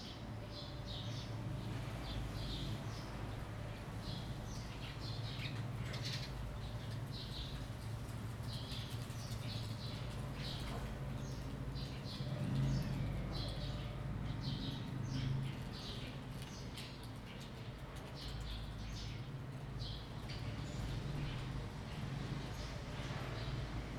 Birds singing, Wind, Small villages
Zoom H2n MS+XY